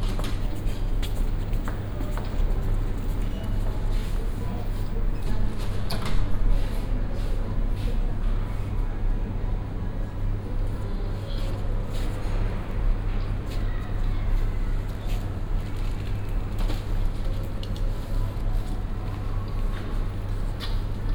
Poznan, new bus depot - waiting room
(binaural) waiting room of the new main bus depot in Poznan, located on the ground floor of a big shopping centre. people purchasing tickets for their joruneys. ticket sales person talking to them through a speaker. shopping center sounds coming from afar.